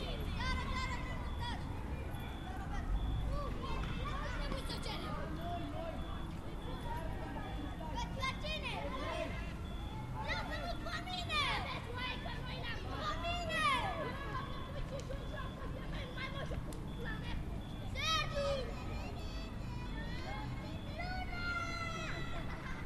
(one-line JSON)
{"title": "Sector, Bucharest, Romania - Nightwalk in Bucharest", "date": "2013-07-19 23:00:00", "description": "Late night walk from Buzesti Str. onto Berzei Str. and the National Opera Park.", "latitude": "44.44", "longitude": "26.08", "altitude": "82", "timezone": "Europe/Bucharest"}